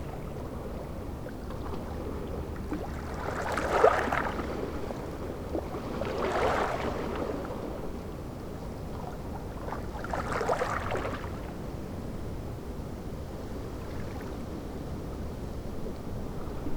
on the calm side of the peninsula
the city, the country & me: october 3, 2010